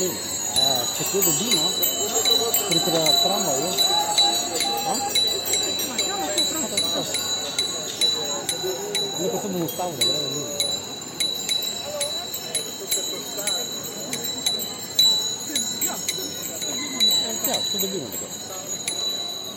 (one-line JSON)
{"title": "Slovenska cesta, Ljubljana, Slovenia - Protest from the balconies goes to the wheels / Protest z balkonov gre na kolesa", "date": "2020-05-01 19:36:00", "description": "After weeks of silence..... Ljubljana is very much alive again and it shows:\nDON'T TAKE OUR FREEDOM TO US!\nIn the weeks when we, as a society, are responding jointly to the challenges of the epidemic, the government of Janez Janša, under the guise of combating the virus, introduces an emergency and curtails our freedoms on a daily basis. One after the other, there are controversial moves by the authorities, including increasing police powers, sending troops to the border, spreading false news about allegedly irresponsible behavior of the population, excessive and non-life-limiting movement of people, combating hatred of migrants, eliminating the most precarious from social assistance measures, spreading intolerance and personal attacks on journalists and press freedom.", "latitude": "46.05", "longitude": "14.50", "altitude": "305", "timezone": "Europe/Ljubljana"}